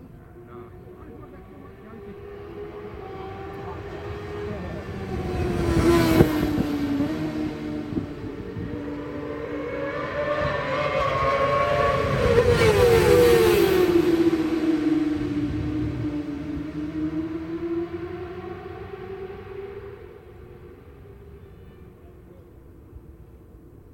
Brands Hatch GP Circuit, West Kingsdown, Longfield, UK - WSB 2003 ... Supersports ... FP ...
WSB 2003 ... Supersports ... free practice ... one point stereo to minidisk ... date correct ... time not so ..?
July 2003